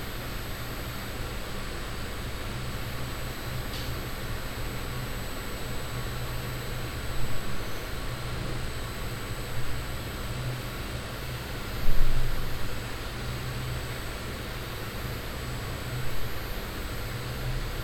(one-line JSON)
{"title": "heinerscheid, cornelyshaff, brewery", "date": "2011-09-12 16:56:00", "description": "At a small local beer brewery. First: The general atmosphere with sounds of the machines and water pumps.\nyou can find more informations about the location here:\nThanks to Thomas the brew master for his kind support.\nHeinerscheid, Cornelyshaff, Brauerei\nIn einer kleinen regionalen Brauerei. Zunächst: die allgemeine Atmosphäre mit Geräuschen von den Maschinen und Wasserpumpen.\nDank an den Braumeister Thomas für seine freundliche Unterstützung.\nHeinerscheid, Cornelyshaff, brasserie\nUne petite brasserie locale. En premier : L’atmosphère générale avec des bruits de machines et des pompes à eau.\nDes informations supplémentaires sur ce lieu sont disponibles ici :\nNos remerciements au maître brasseur Thomas pour son aimable soutien.", "latitude": "50.10", "longitude": "6.09", "altitude": "525", "timezone": "Europe/Luxembourg"}